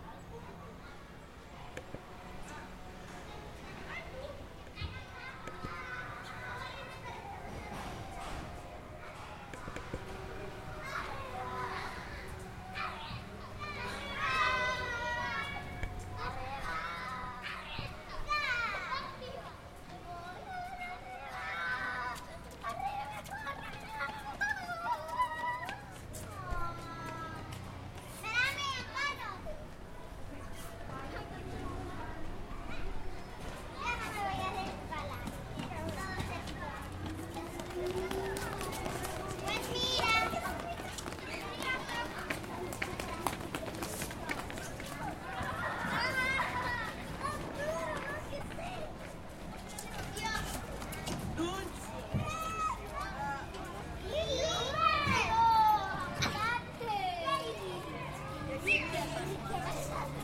Noe Valley, San Francisco, CA, USA - Elevation Sound Walk
Recordings starting at the top of Billy Goat Hill Park and ending in Mission. Recordings took place every 5 minutes for 1 minute and was then added together in post-production. The path was decided by elevation, starting from high to low.
19 September